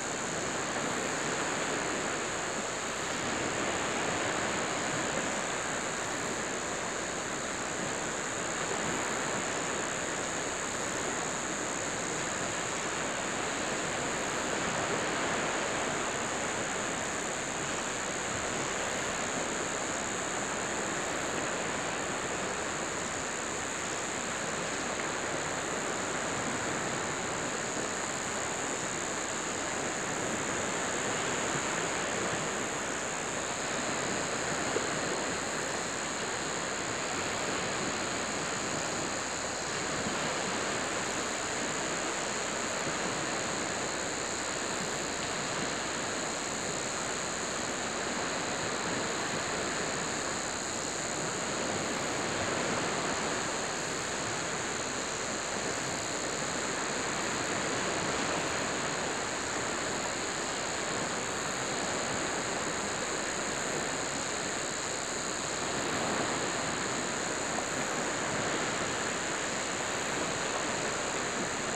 Cape Tribulation, QLD, Australia - dusk on myall beach
sound from my film "Dusk To Evening On Myall Beach".
microphone was placed on the sand facing the forest which gives an odd sound to the recording.
recorded with an AT BP4025 into an Olympus LS-100.
Cape Tribulation QLD, Australia, 1 January 2014